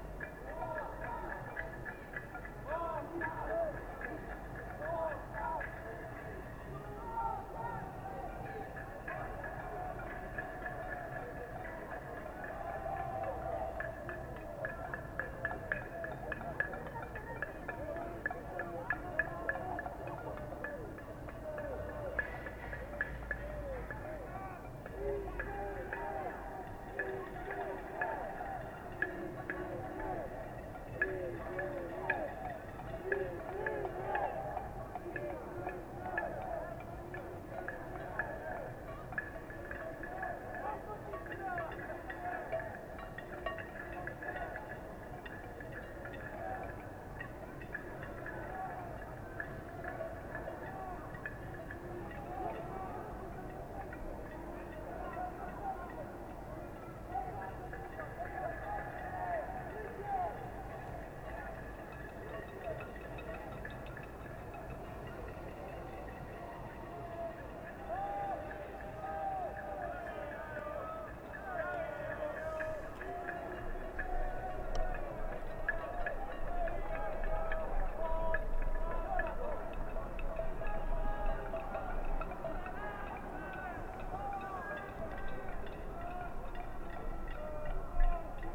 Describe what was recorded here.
University campus. Local students tradition is that freshly graduated engineer or master of science must be thrown into the Kortowskie lake by his collegues. Also in winter...